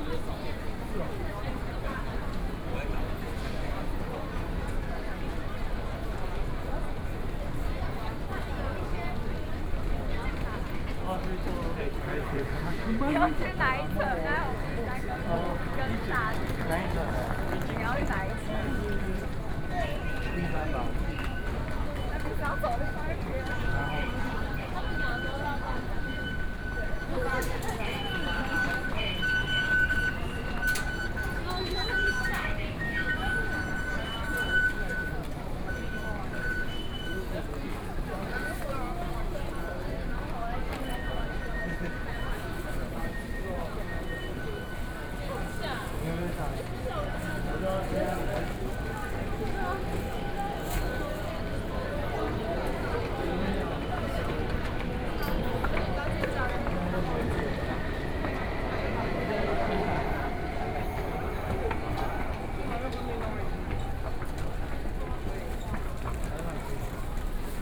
Starting from MRT station platform, Went outside the station
Binaural recordings, Sony PCM D100 + Soundman OKM II